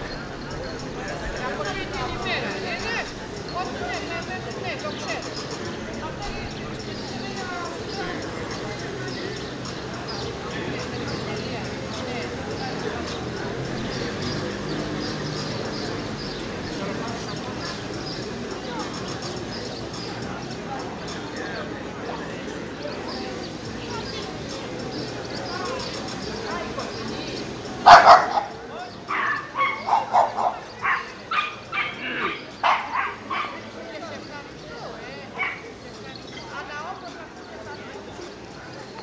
{"title": "Eleftherias, Corfu, Greece - Theotoki Square - Πλατεία Θεοτόκη (Πεντοφάναρο)", "date": "2019-04-01 10:12:00", "description": "People talking. Music is coming from the nearby coffee shops. The dogs barking. The square is situated next to Eleftherias street.", "latitude": "39.62", "longitude": "19.92", "altitude": "15", "timezone": "Europe/Athens"}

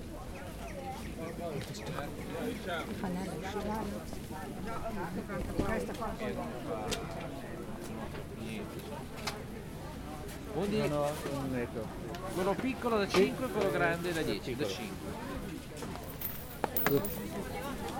{"title": "mittwochsmarkt in luino, italien", "date": "2010-12-22 10:30:00", "description": "luino, lago maggiore, mercato, markt, italien, marktbetrieb", "latitude": "46.00", "longitude": "8.74", "altitude": "203", "timezone": "Europe/Rome"}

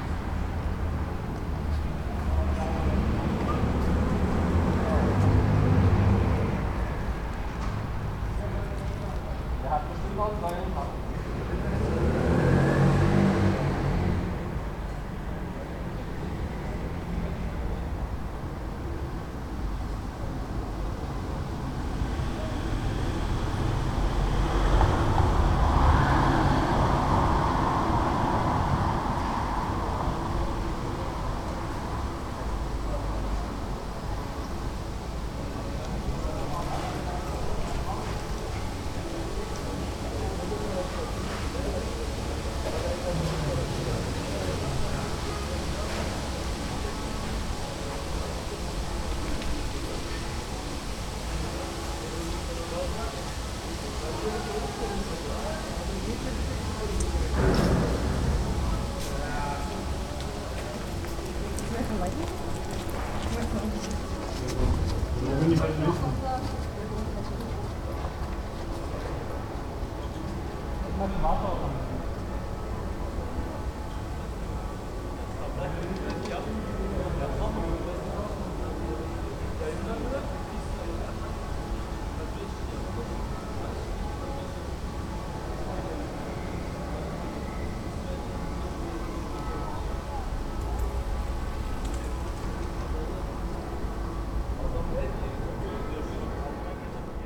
{"title": "Dresdener Str., Sebastianstr. - Eingang zum Untergrund / underground entrance", "date": "2009-08-22 18:00:00", "description": "microphone on the grate, pointing downwards, echo and reflections of street sounds, also catching sounds from below the ground, later wind and church bells, and two women came and told me about a sound art concert in the underground later. coincidences.", "latitude": "52.50", "longitude": "13.41", "altitude": "37", "timezone": "Europe/Berlin"}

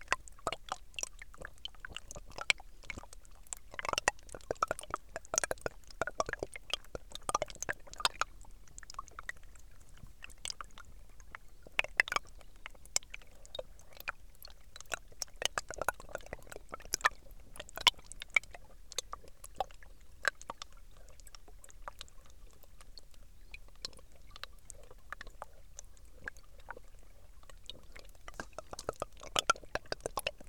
{"title": "Nolenai, Lithuania, frozen streamlet", "date": "2021-01-10 16:10:00", "description": "Frozen stramlet. First part of the track is recorded with small omni mics, second part - geophone placed on ice", "latitude": "55.56", "longitude": "25.60", "altitude": "137", "timezone": "Europe/Vilnius"}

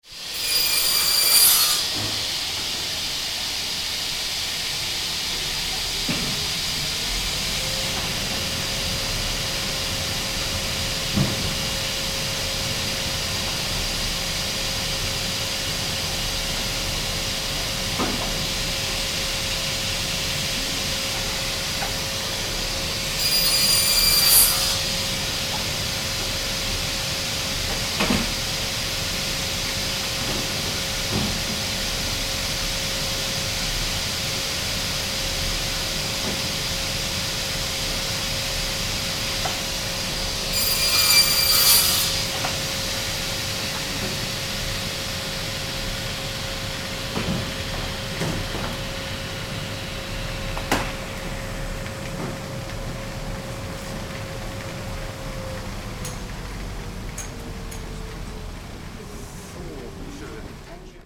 {"title": "hasenheide, bauhaus, holzzuschnitt", "date": "2008-08-15 12:45:00", "description": "15.08.2008, Holzzuschnitt Bauhaus Hasenheide Berlin", "latitude": "52.49", "longitude": "13.42", "altitude": "42", "timezone": "Europe/Berlin"}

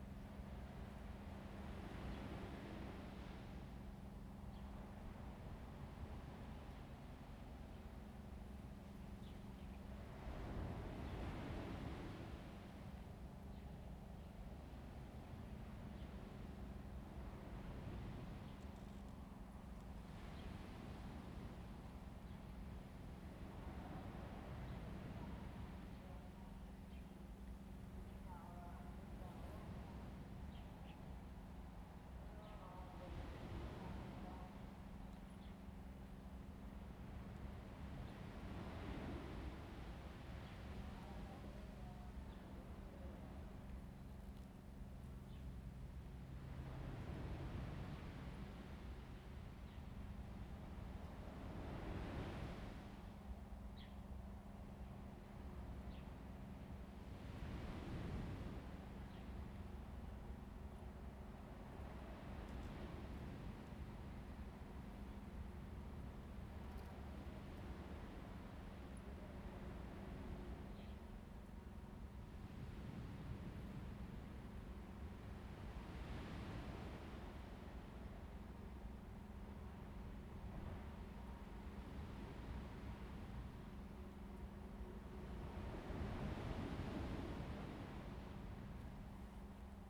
{"title": "青岐, Lieyu Township - the waves and the wind", "date": "2014-11-04 11:49:00", "description": "Sound of the waves\nZoom H2n MS +XY", "latitude": "24.41", "longitude": "118.23", "altitude": "10", "timezone": "Asia/Shanghai"}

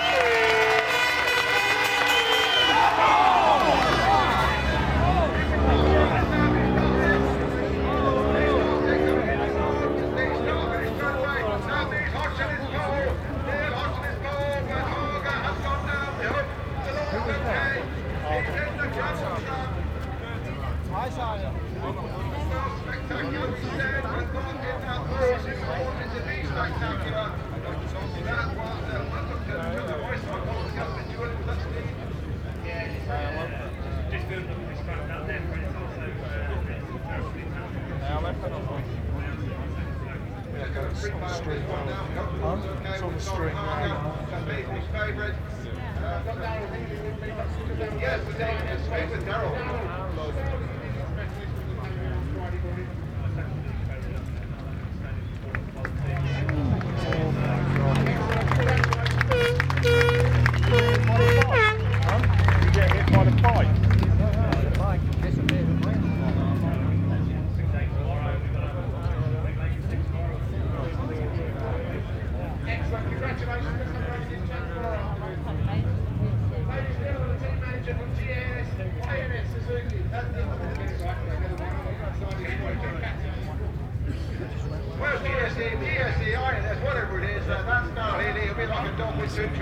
{"title": "Brands Hatch Circuits Ltd, Brands Hatch Road, Fawkham, Longfield, United Kingdom - World Superbikes 2000 ... Superpole (cont) ...", "date": "2000-08-05 16:20:00", "description": "World Superbikes 2000 ... Superpole (contd) ... one point stereo mic to minidisk ...", "latitude": "51.36", "longitude": "0.26", "altitude": "139", "timezone": "GMT+1"}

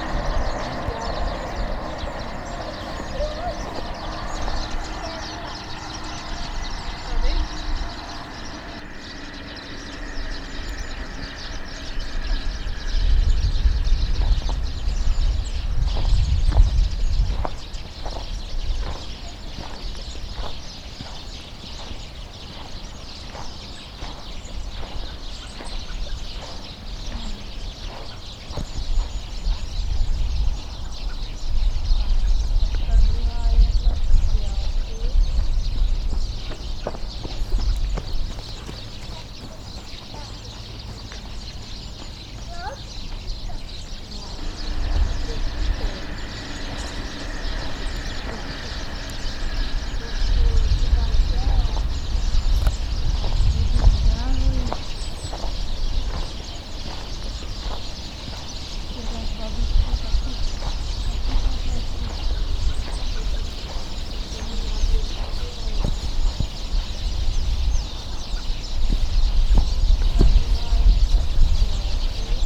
{"title": "Praha-Dolní Počernice, Česká republika - sparrows, iceskaters", "date": "2013-01-17 15:24:00", "description": "Flock of birds feeding in trees near the lake in Dolní Počernice, (first I thougt Bohemian Waxwings, but they were sparrows), little stream and several lonely ice skaters. Last day of the frost period.", "latitude": "50.08", "longitude": "14.59", "altitude": "238", "timezone": "GMT+1"}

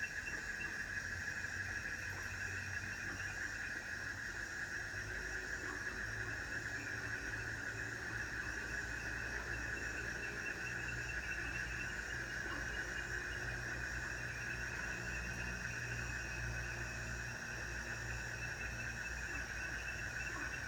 {"title": "桃米巷, 桃米里 - Frogs sound", "date": "2016-05-17 20:53:00", "description": "Ecological pool, Frogs chirping\nZoom H2n MS+XY", "latitude": "23.94", "longitude": "120.93", "altitude": "467", "timezone": "Asia/Taipei"}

